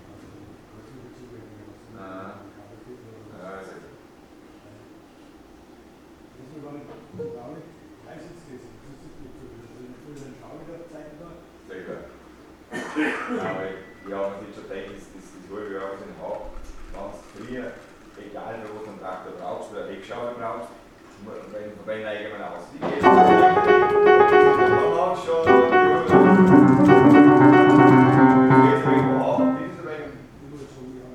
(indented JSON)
{
  "title": "ulrichsberg, autohaus krendel - atmo »schaufensterstück«, peter ablinger: »landschaftsoper ulrichsberg«, V. akt",
  "date": "2009-06-13 15:30:00",
  "latitude": "48.68",
  "longitude": "13.91",
  "altitude": "626",
  "timezone": "Europe/Berlin"
}